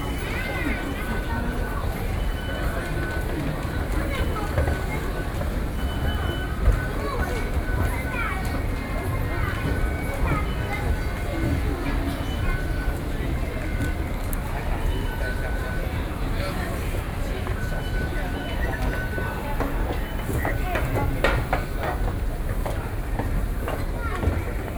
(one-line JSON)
{"title": "Taipei Main Station, Zhongzheng District, Taipei City - Taipei Main Station", "date": "2012-12-03 18:06:00", "latitude": "25.05", "longitude": "121.52", "altitude": "12", "timezone": "Asia/Taipei"}